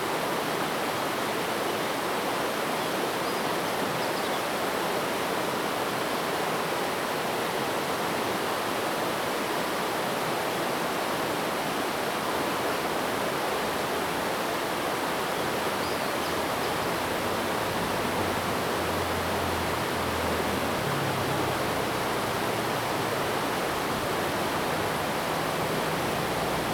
桃米橋, 桃米里 Puli Township - Next to the river bank
Sound streams, Traffic Sound
Zoom H2n MS+XY